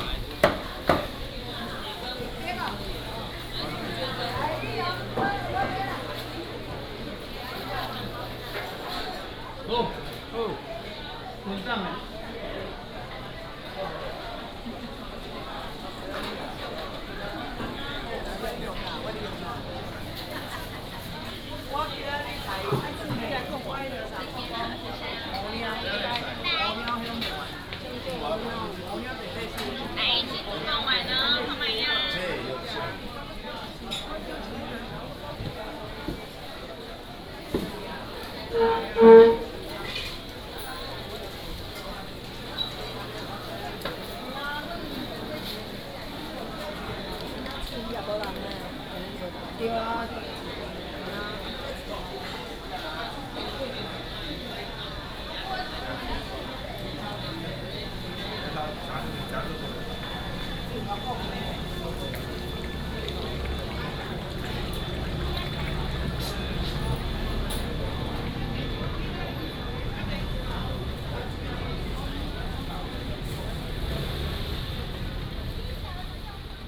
鴨母寮市場, North Dist., Tainan City - Old market
Old market, Sellers selling sound